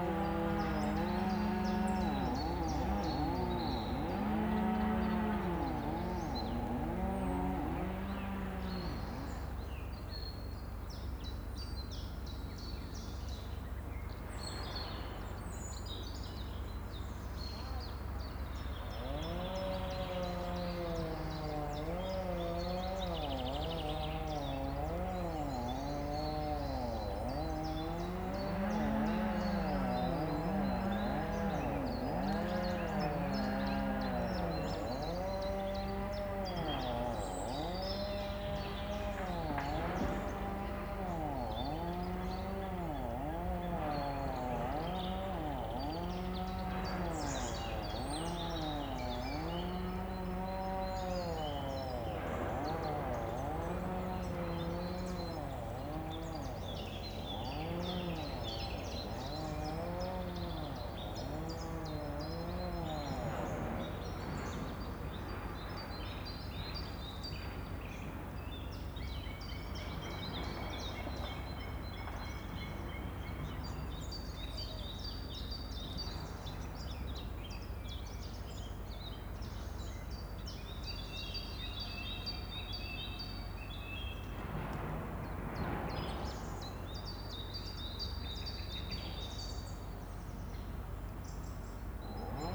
near Allrath, Germany - Chain saws reverberate amongst trees
Mid distance chain saws felling trees. Birds include chiffchaff, great tit, chaffinch, song thrush.